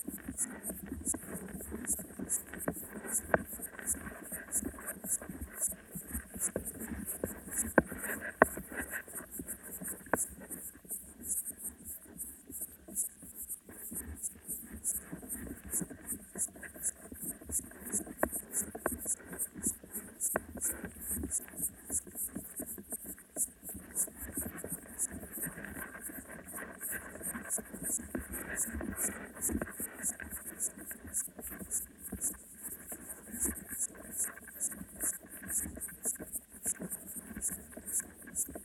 Utena, Lithuania, Kloviniai lake underwater
Hydrophone recording in Kloviniai lake. And soon there will be another landscape because our city council decided to drain the water in the lake for the reason of dam repair...
21 June 2021, 20:30